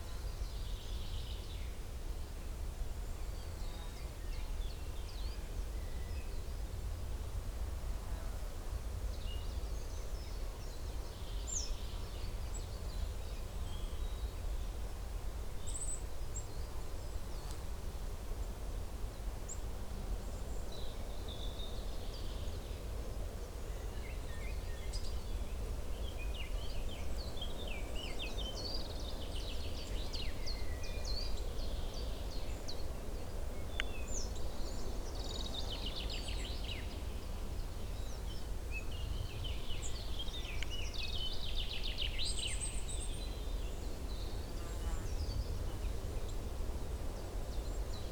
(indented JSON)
{
  "title": "Unnamed Road, Črniče, Slovenia - Veliki Rob",
  "date": "2020-06-28 08:04:00",
  "description": "Two meters from the top of the hill. You can hear wind and birds. Microphones where placed on a bush. Mic: Lom Usi Pro.",
  "latitude": "45.93",
  "longitude": "13.80",
  "altitude": "1211",
  "timezone": "Europe/Ljubljana"
}